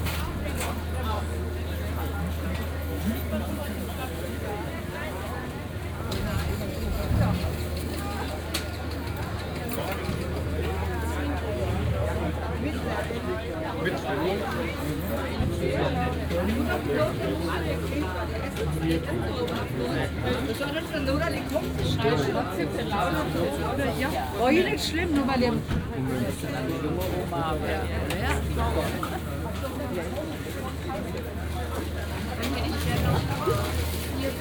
Limburg an der Lahn, Neumarkt - weekend market
nice small market in the center of Limburg, walk, binaural
(tech note: sony pcm d50, okm2)
Limburg an der Lahn, Germany, 10 March, 10:25